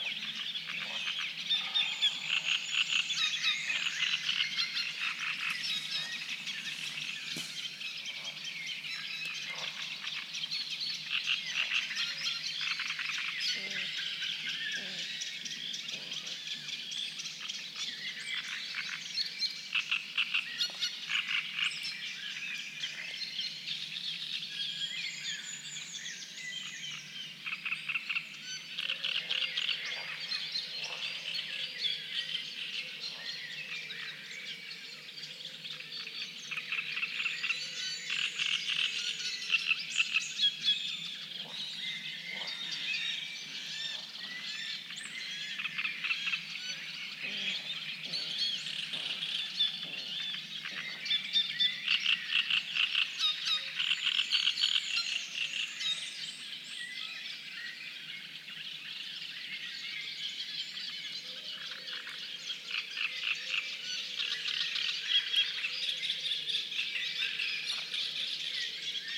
Puszcza Zielonka Landscape Park, Trojanka Springs - Frogs - peat bog alarm
Early morning on a cold May day, 5 a.m. Trojnka springs is a lovely, isolated place in the middle of Puszcza Zielonka (Zielonka Forest) Landscape Park. A place to sleep for many species of waterfowl and a popular waterhole for local animals. In this part of the year hundreds of frogs go through their annual mating rituals making extremely loud noises. The one who will do it the loudest will win the competition.